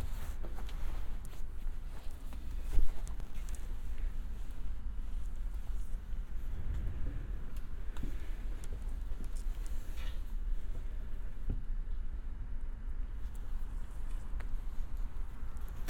Bereichsbibliothek Physik @ TU Berlin - Enter Bereichsbib Physik
Deutschland, 7 March, 14:39